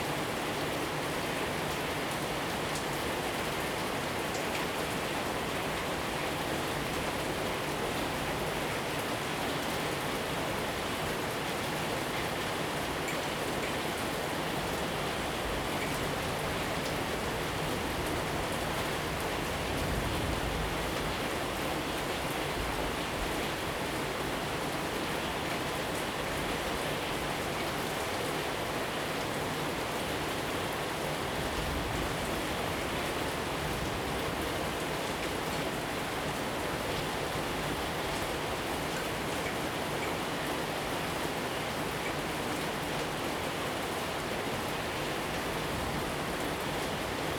Beitou - Thunderstorm
Thunderstorm
H2n MS+XY 4ch
Taipei City, Taiwan, 19 August 2014